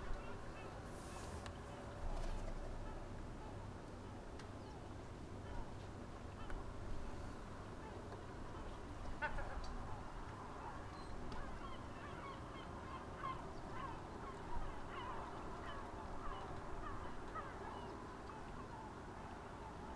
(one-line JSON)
{"title": "from/behind window, Novigrad, Croatia - summer morning", "date": "2013-07-18 06:31:00", "description": "seagulls, shy waves, car traffic from afar ... morning sounds at the sea side", "latitude": "45.32", "longitude": "13.56", "timezone": "Europe/Zagreb"}